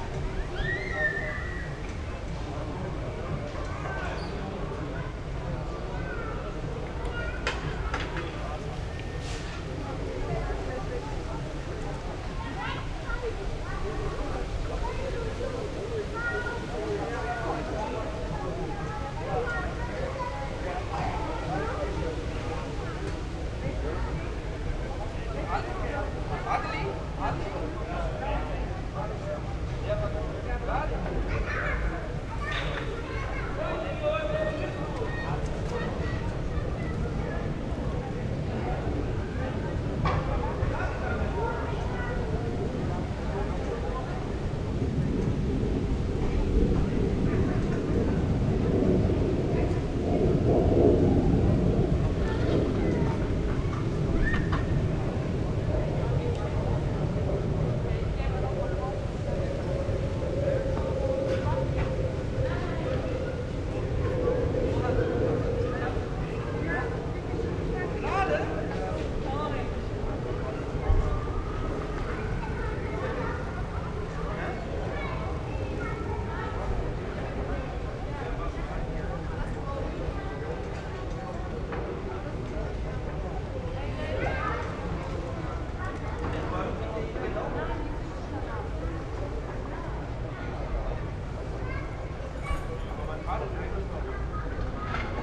Ernst-Reuter-Platz, Monheim am Rhein, Deutschland - Monheim am Rhein - Ernst Reuter Platz
At Ernst Reuter Platz in Monheim am Rhein - the sound of the square near the small playground - children runnining around and making noises
soundmap nrw - topographic field recordings and social ambiences
Nordrhein-Westfalen, Deutschland, 16 July 2022, ~16:00